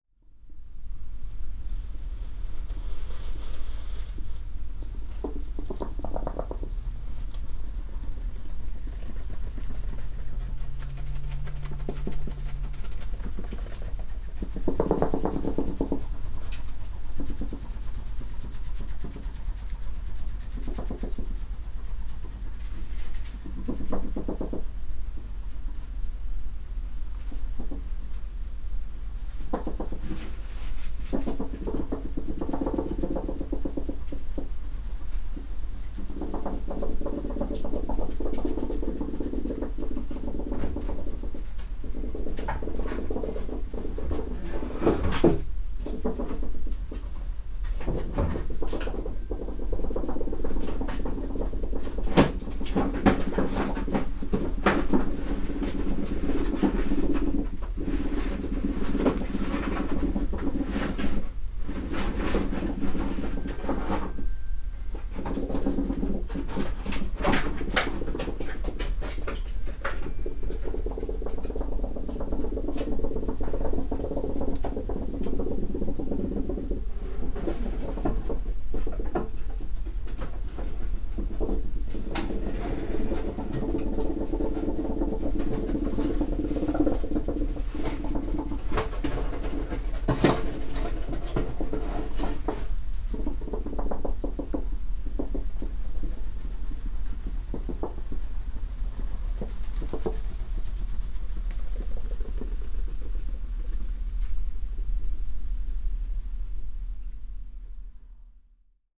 Recordings in the Garage, Malvern, Worcestershire, UK - Hedgehog
The recorder is inside the garage while the door is open expecting thunder during an overnight recording. A hedgehog enters, explores and leaves.
MixPre 6 II with 2 x Sennheiser MKH 8020s.